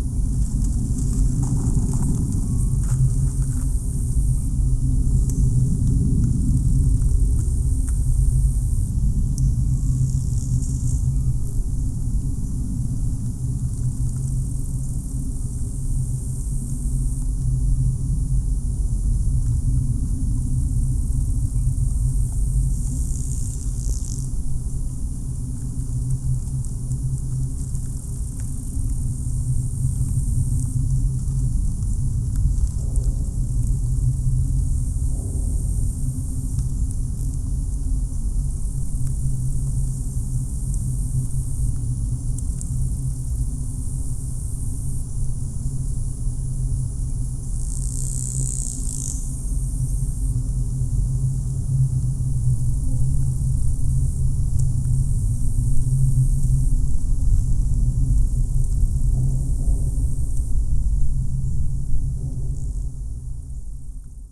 Fayette County, TX, USA - Inside Solis Ranch Pipe
Recorded inside an exposed segment of metal pipe on a Maranatz PMD661 and a pair of DPA 4060s.
Giddings, TX, USA, 6 September 2015, 13:36